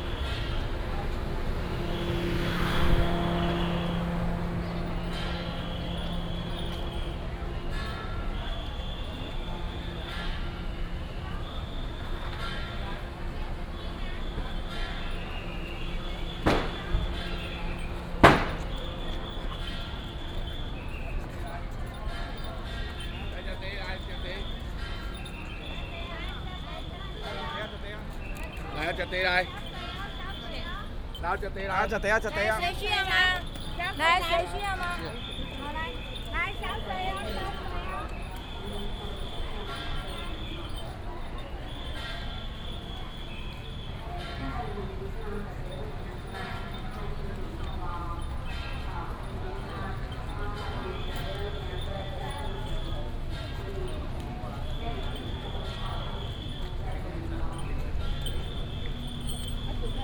Gongzhuan Rd., Huwei Township - temple fair
temple fair, Baishatun Matsu Pilgrimage Procession
Yunlin County, Taiwan, 3 March 2017, ~15:00